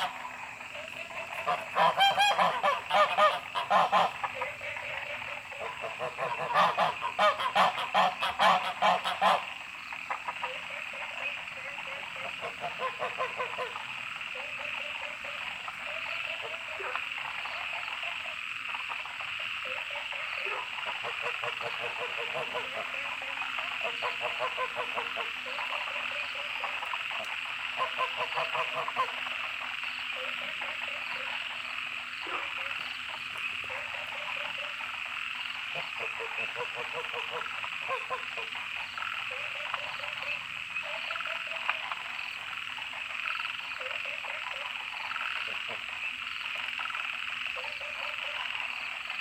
Shuishang Ln., Puli Township, Nantou County - Frogs chirping and Goose calls
Frogs chirping, Goose calls, Dogs barking
Zoom H2n MS+XY